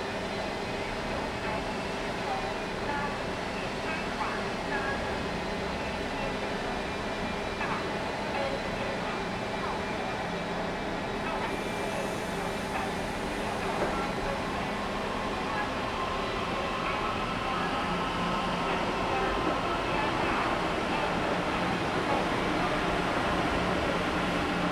Zhongzhou Station, Tainan - in the Platform
Station broadcast messages, Construction noise, Sony ECM-MS907, Sony Hi-MD MZ-RH1